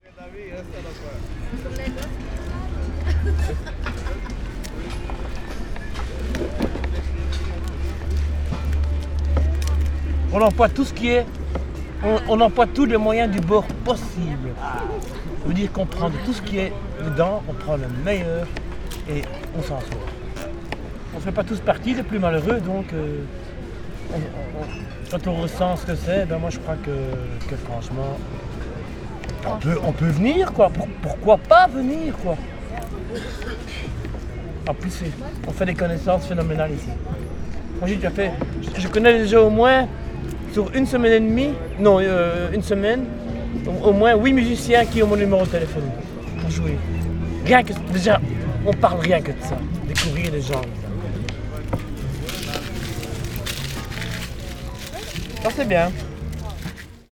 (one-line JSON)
{"date": "2011-06-04 16:27:00", "description": "Brussels, Place de Moscou, Real Democracy Now Camp, the kitchen", "latitude": "50.83", "longitude": "4.35", "timezone": "Europe/Brussels"}